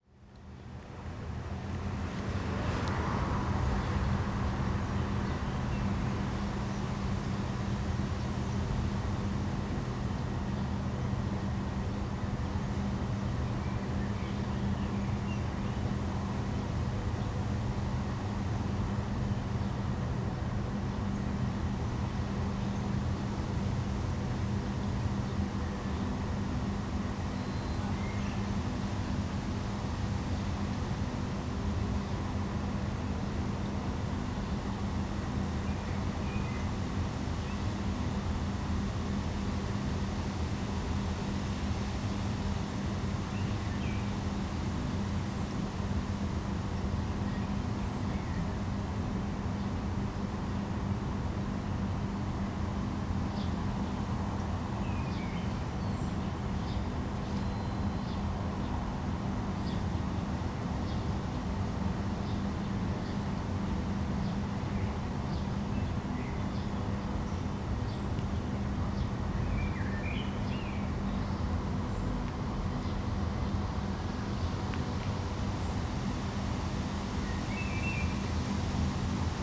Tezno, Maribor, Slovenia - by the dumpsters
behind the factory, by a set of dumpsters full of metal shavings. i was actually waiting for some overheard metal signs to creak again in the breeze, but the y never did.